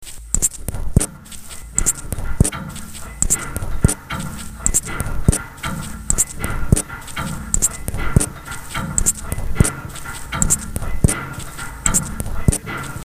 F60 Coal Mining Bridge, clanking
Lichterfeld-Schacksdorf, Germany